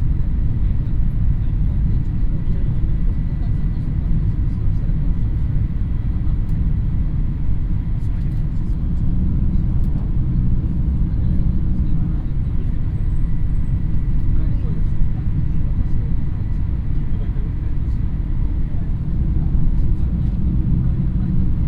inside shinkhansen train
inside the shinkhansen train - coming from tokio - direction takasaki - recorded at and for the world listening day sunday the 18th of july2010
international city scapes - social ambiences and topographic field recordings